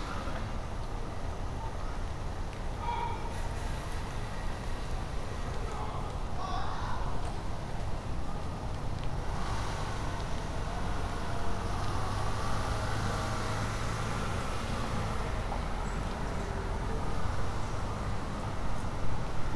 Suffex Green Ln NW, Atlanta, GA, USA - Recording at a Neighborhood Picnic Table
This recording features sounds from my street as heard from a neighborhood picnic table. The table itself is located in a woodsy area central to multiple sets of apartments. I've recorded here before, but I never quite realized how many different sounds occur in my own neighborhood. This recording was done with my new Tascam Dr-100mkiii and a dead cat wind muff.
Georgia, United States, 24 December 2019